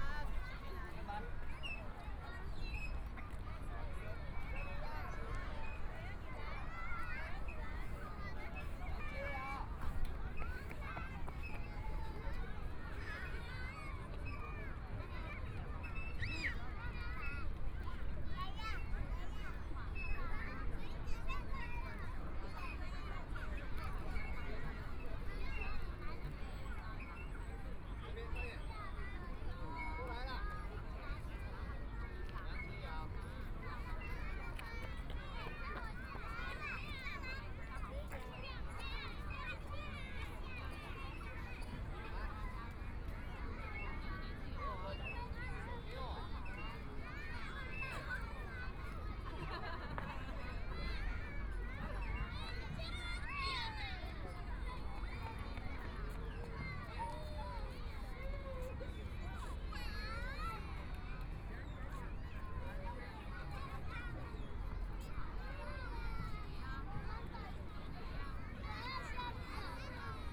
DaJia Riverside Park, Taipei City - Children play area
Children play area, Holiday, Sunny mild weather, Binaural recordings, Zoom H4n+ Soundman OKM II
Taipei City, Taiwan, 16 February 2014